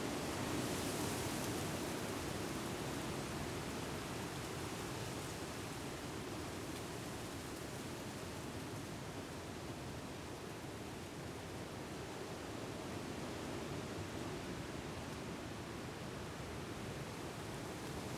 Troon, Camborne, Cornwall, UK - Wind Through The Trees
Been walking through these woods a lot recently listening to the wind blowing through the trees, so I thought I would head down there on a dryish day and record an atmosphere. I used a pair of DPA4060 microphones, Sound Devices Mixpre-D and a Tascam DR-100 to capture the recording. I've done a little bit of post-processing, only slight EQ adjustments to remove some low frequency rumble that was in the recording.